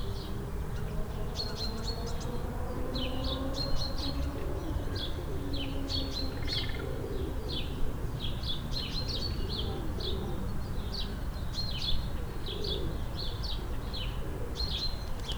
Waligórskiego, Wrocław, Polska - Covid-19 Pandemia
Covid 19 at Le Parc Południowy, est un parc paysager de la ville de Wrocław situé dans le sud de la ville (arrondissement de Krzyki - Quartier de Borek). Il a une grande valeur de composition et de dendrologie.
Parmi les spécimens remarquables du parc on peut citer : le taxodium (Taxodium distichum), le tulipier de Virginie (Liriodendron tulipifera), le noyer blanc d'Amérique (Carya ovata) et une espèce que l'on rencontre rarement en Pologne, le marronnier d'Inde à feuilles digitées (Aesculus hippocastanum Digitata).